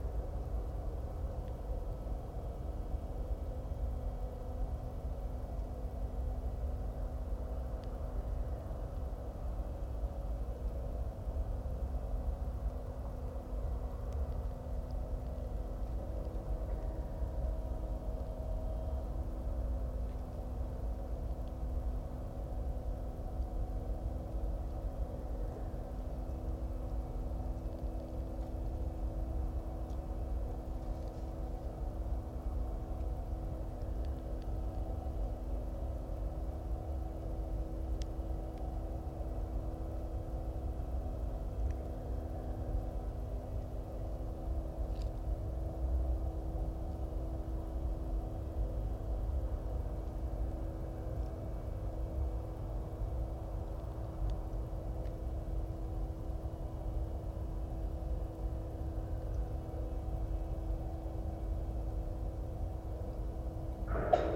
Wind farm: a rotating humming generator in the green environment, cycles of birds, weather, distance; audio stream, Bernau bei Berlin, Germany - The becalmed drone continues with twangs and owls
There is light rustling in the leaves very close to the mics. Again unknown - maybe mice.